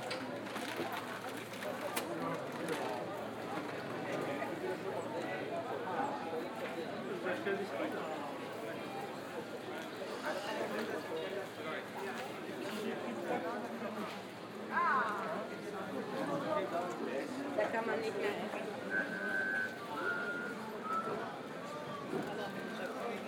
Maybachufer, Berlin, Germany - Familiar Voices - 3rd June 2022
Familiar voices at the Neuköllner Wochenmarkt Maybachufer.
Deutschland, June 2022